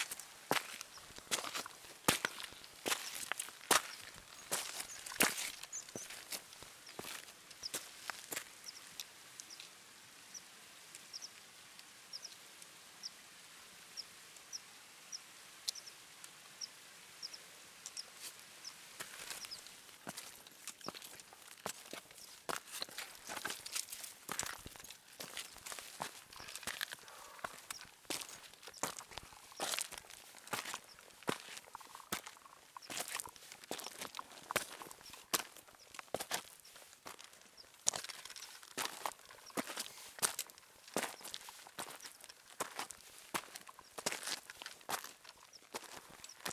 Harter Fell, Penrith, UK - Walking up Harter Fell
England, United Kingdom